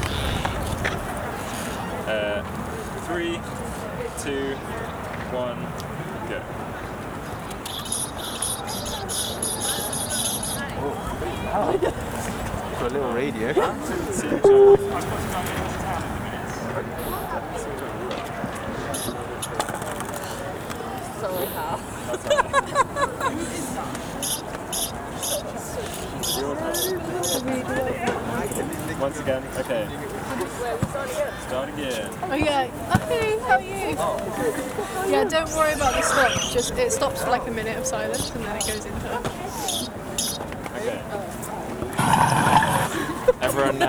Richmond Terrace, Brighton, Vereinigtes Königreich - Brighton - the Level - sounder preperation
In Brighton at the Level - a public playground - here a short recording of the preperation of a group of art students for their presentation at the Brighton sound art festival
soundmap international:
social ambiences, topographic field recordings
South East England, England, United Kingdom, 18 March 2022